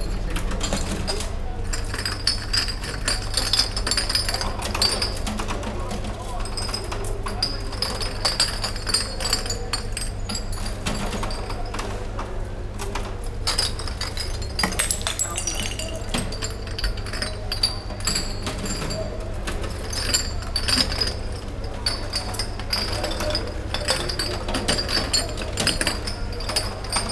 Sunday morning, after celebrations, Leitza